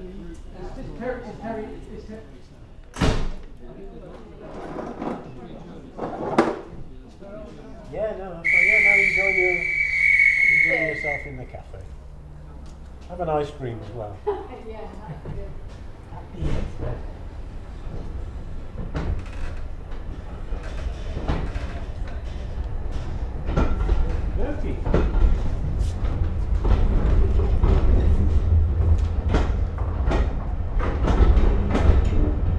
Steam at Toddington Station, Gloucestershire, UK - Steam at Toddington Station
A general scene at this preserved steam line station. Recorded while sitting on the bench on the station platform. MixPre 6 II 2 x Sennheiser MKH 8020s + Rode NTG3.